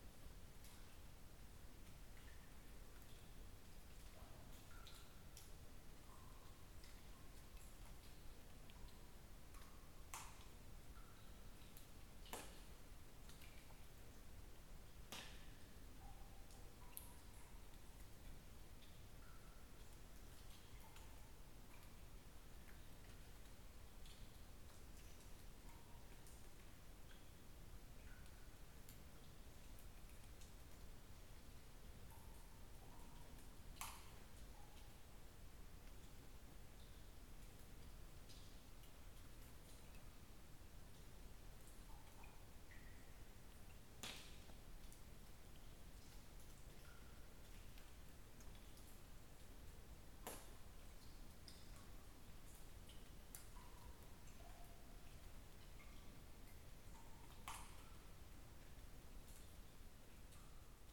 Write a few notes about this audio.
soundscape inside Carnglaze Cavern